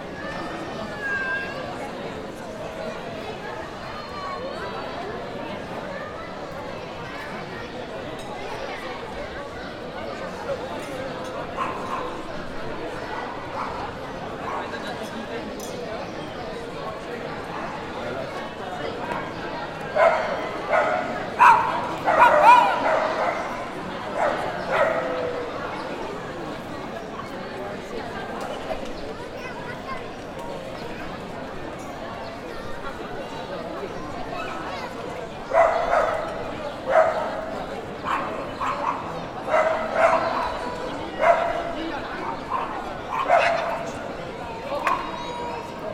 C. Mayor, San Sebastián, Gipuzkoa, Espagne - in front of the cathedral
in front of the cathedral
Captation : ZOOM H6